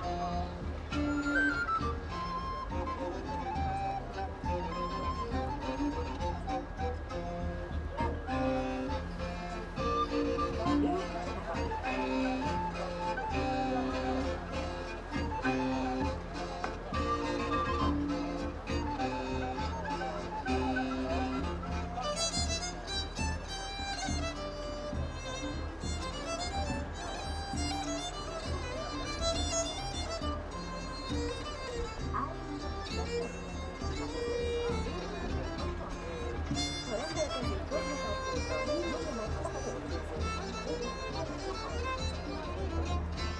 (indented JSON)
{
  "title": "Budapest, Vorosmarty Square, Christmas Fair 2010",
  "date": "2010-11-26 16:23:00",
  "latitude": "47.50",
  "longitude": "19.05",
  "altitude": "117",
  "timezone": "Europe/Budapest"
}